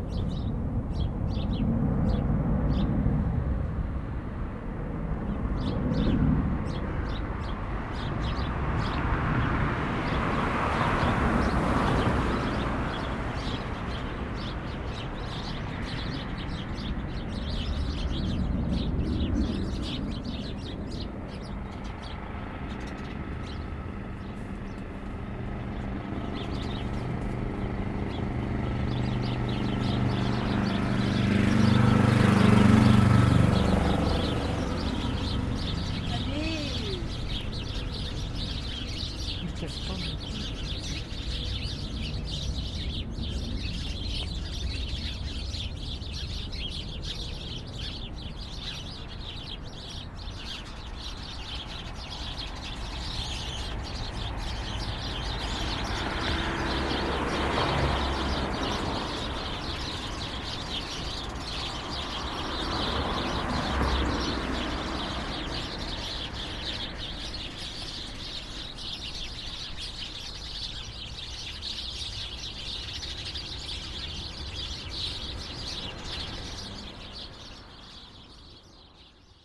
Av. Daniel Rops, Aix-les-Bains, France - repère de moineaux
Un repère de moineaux dans la végétation grimpante du mur du cinéma "lesToiles du Lac" beaucoup de circulation ici.
France métropolitaine, France, 18 February 2018